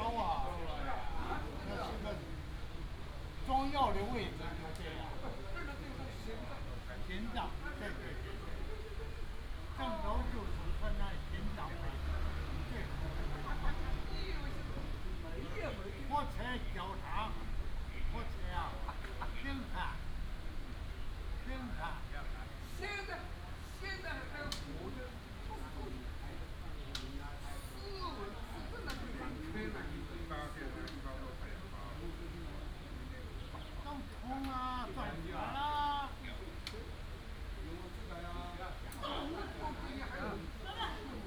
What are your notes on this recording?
In the square of the temple, Old man, Traffic sound, bird, Play chess and chat